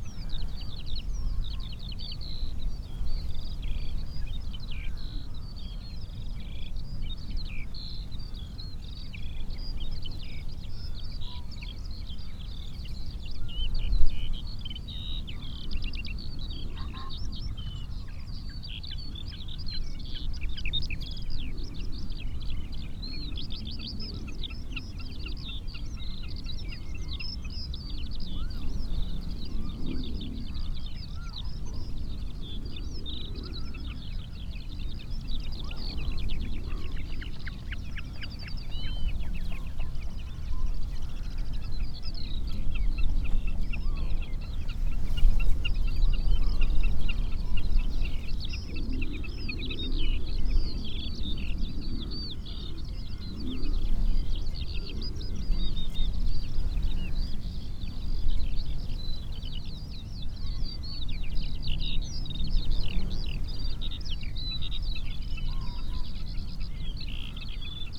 {
  "title": "Isle of Islay, UK - skylark song and resonating fence wires soundscape ...",
  "date": "2018-05-24 06:02:00",
  "description": "Singing skylarks and resonating fence wires soundscape ... bird song and calls from ... snipe ... redshank ... raven ... mute swan ... cuckoo ... crow ... pheasant ... curlew ... jackdaw ... lapwing ... background noise ... windblast ... pushed a SASS in between the bars of a gate to hold it in place ...",
  "latitude": "55.82",
  "longitude": "-6.34",
  "altitude": "1",
  "timezone": "Europe/London"
}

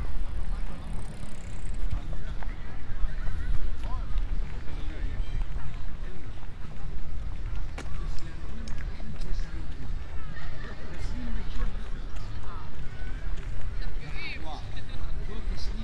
{
  "title": "Ziegelwiese Park, Halle (Saale), Germania - WLD2020, World Listening Day 2020, in Halle, double path synchronized recording: A",
  "date": "2020-07-18 19:48:00",
  "description": "WLD2020, World Listening Day 2020, in Halle, double path synchronized recording: A\nIn Halle Ziegelwiese Park, Saturday, July 18, 2020, starting at 7:48 p.m., ending at 8:27 p.m., recording duration 39’18”\nHalle two synchronized recordings, starting and arriving same places with two different paths.\nThis is file and path A:\nA- Giuseppe, Tascam DR100-MKIII, Soundman OKMII Binaural mics, Geotrack file:\nB – Ermanno, Zoom H2N, Roland CS-10M binaural mics, Geotrack file:",
  "latitude": "51.49",
  "longitude": "11.95",
  "altitude": "77",
  "timezone": "Europe/Berlin"
}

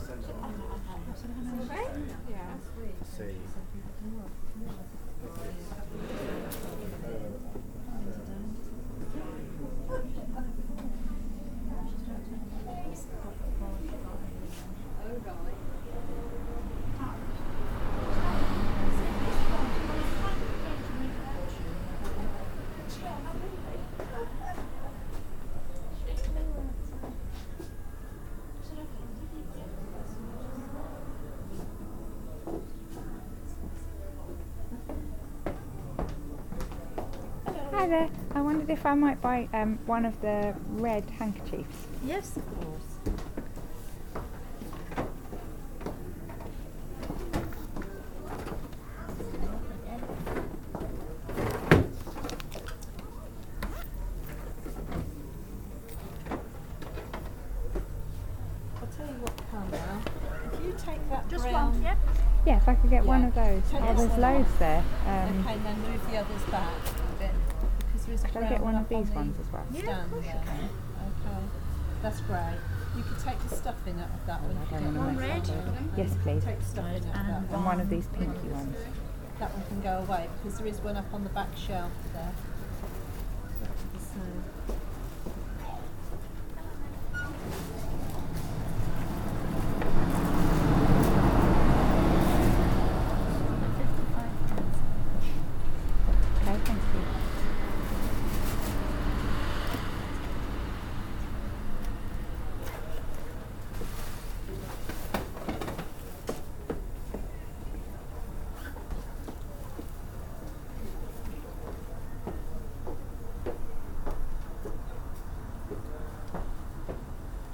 {
  "title": "Jacksons of Reading, Reading, UK - The pneumatic change chute in action",
  "date": "2011-05-21 16:15:00",
  "description": "This is the soundscape inside Jacksons of Reading when it was still trading in 2011. You can hear something of the acoustic inside, and how all the handkerchiefs that I was trying to buy were under glass in an old fashioned glass display unit with wooden doors. You can hear the buses idling outside, and the sounds towards the end of the recording are of the pneumatic change chute in operation; the sound as the change is sucked up into the tubes, and the sound as it is hurled out again containing change and a receipt. It was the last such tube system in operation in the UK.",
  "latitude": "51.46",
  "longitude": "-0.97",
  "altitude": "45",
  "timezone": "Europe/London"
}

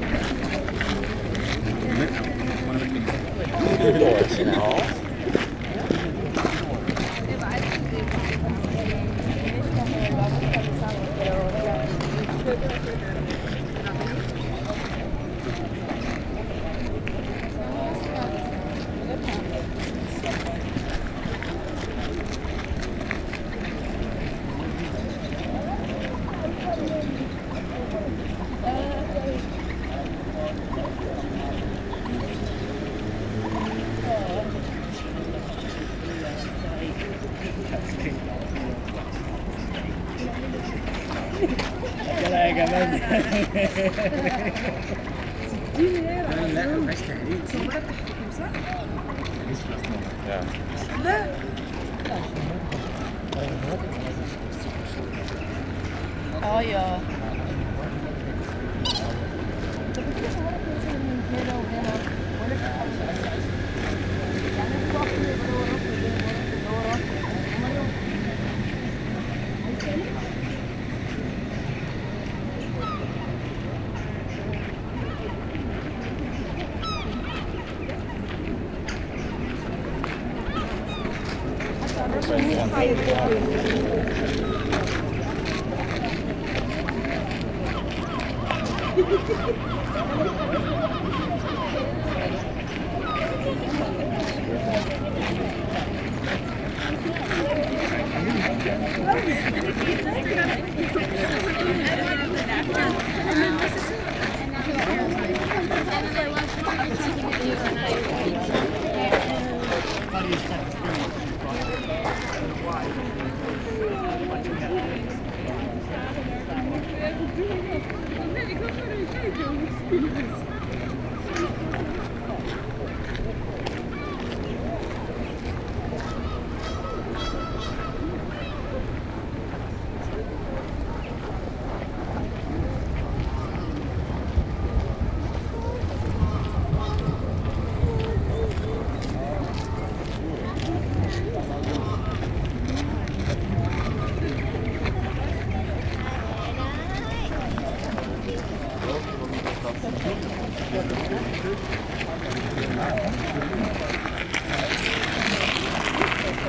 {
  "title": "Den Haag, Nederlands - Urban lake",
  "date": "2019-03-30 14:30:00",
  "description": "Hofvijver. It’s a big lake in the center of Den Haag, bordered by gravel paths. Lot of people walking because it’s sunny. European Herring Gull, Black-headed Gull, Eurasian Coot and Common Moorhen.",
  "latitude": "52.08",
  "longitude": "4.31",
  "altitude": "5",
  "timezone": "Europe/Amsterdam"
}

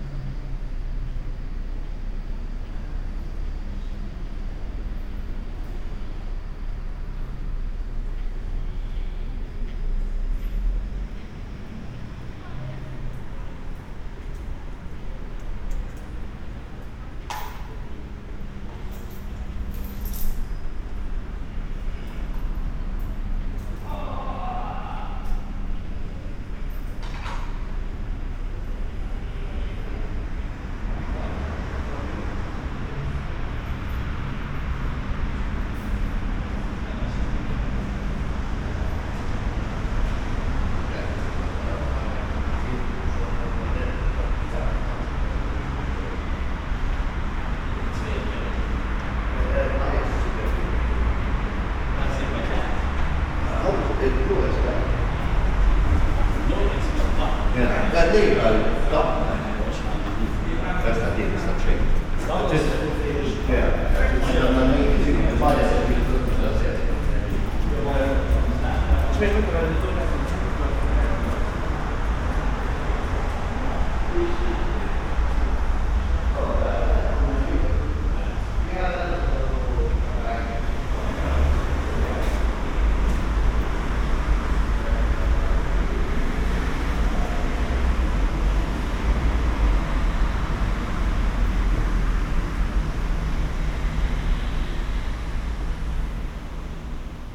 {
  "title": "bus station, Rue Aldringen, Luxemburg - walking below the ground",
  "date": "2014-07-05 22:05:00",
  "description": "walking in the underground level, below the bus terminal. many homeless people hanging out here, not so many people passing-by.\n(Olympus LS5, Primo EM172)",
  "latitude": "49.61",
  "longitude": "6.13",
  "altitude": "306",
  "timezone": "Europe/Luxembourg"
}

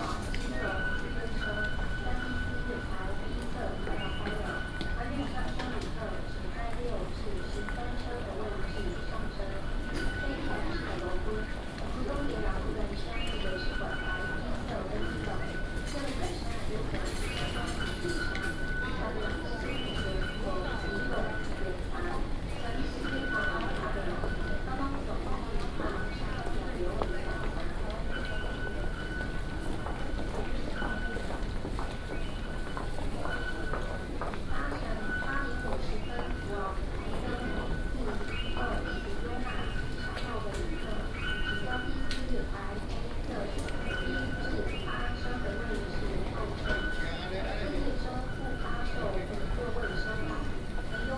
{
  "title": "Taipei Main Station, Taipei city, Taiwan - At the station",
  "date": "2016-03-23 08:41:00",
  "description": "At the station, Three different transportation systems",
  "latitude": "25.05",
  "longitude": "121.52",
  "altitude": "12",
  "timezone": "Asia/Taipei"
}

